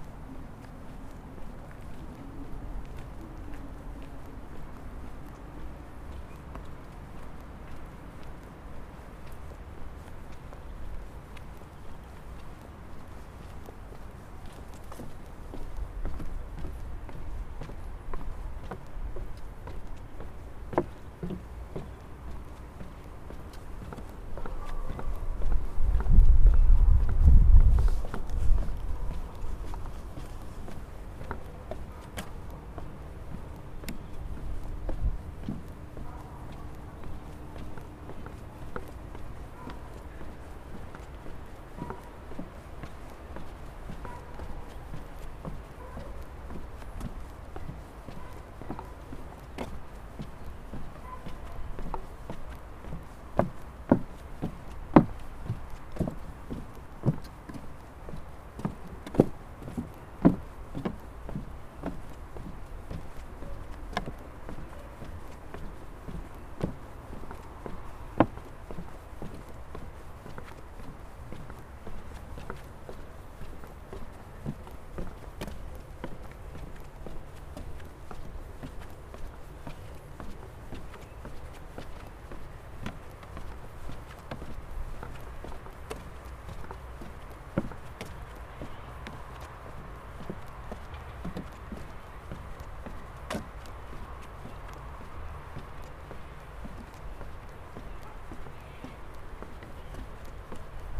Recorded with a ZoomH4N. Sound-walking from Ep1 - 20 to Ep2 Parking Lot. Some wind.
Caldas da Rainha, Portugal, 3 March 2014